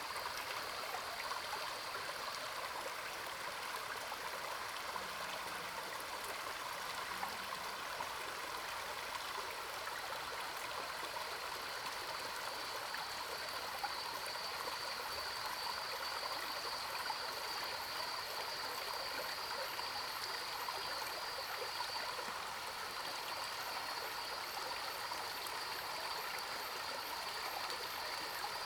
Zhonggua Rd., Puli Township - In the morning
Birds call, Cicadas cries, In the stream shore
Zoom H2n MS+XY